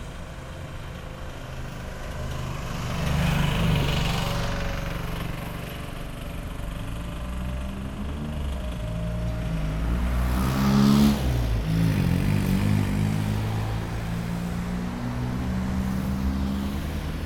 Querceta LU, Italy - Building of the new bicycle lane
Crossroad sounds and the building of the new bicycle lane connecting Querceta to Forte dei Marmi, right under my windows.
Recorded with a Tascam DR-05